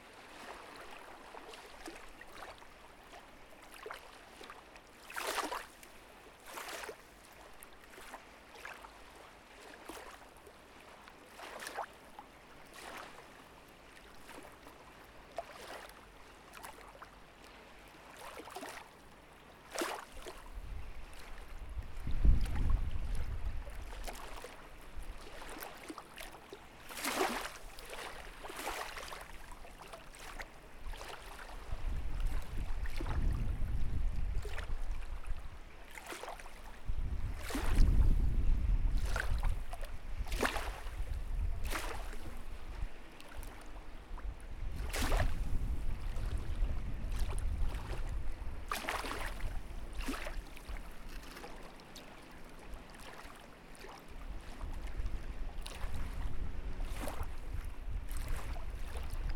Most Kolejowy, Nadbrzeżna, Gorzów Wielkopolski, Polska - North riverside of Warta under the railway bride.
North riverside of Warta under the railway bride. The recording comes from a sound walk around the railway locations. Sound captured with ZOOM H1.
lubuskie, RP, 13 August 2019, ~14:00